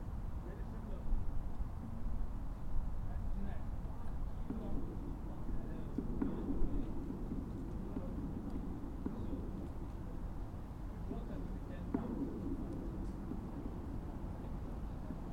Highfield Campus, Southampton, UK - 015 Sculpture
contact mikes on two of four uprights of Justin Knowles' Steel Forms
University Rd, Southampton, UK, January 2017